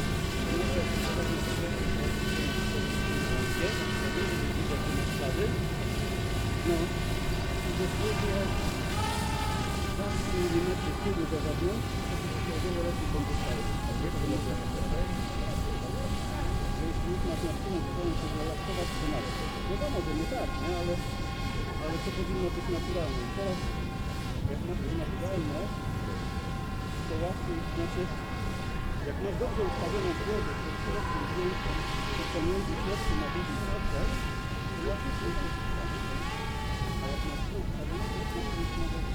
Poznan, UAM Campus. - drone testing
a couple playing with a small, agile drone. they are flying it over the campus and back. just testing how it works I guess. the sound of drones becomes more and more recognizable and common. something that was not known just a few years ago.
about 50m away a guy is teaching other guy how to ride roller skates, you can clearly hear their conversation, muffled only by the sound of a truck and the drone
(roland r-07)
Poznań, Poland, 24 March